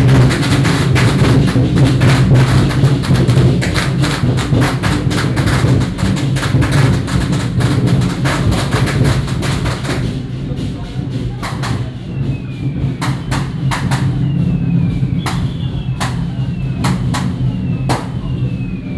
淡水福佑宮, New Taipei City - Walking in the temple
Walking in the temple, Firecrackers sound, temple fair